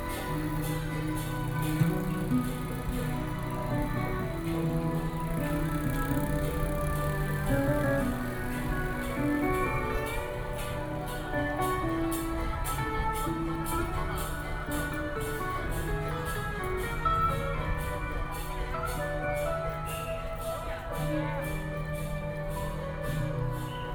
Qingshui District, Taichung - Funeral
Funeral, Zoom H4n+ Soundman OKM II
台中市, 中華民國, 18 May 2013, 10:33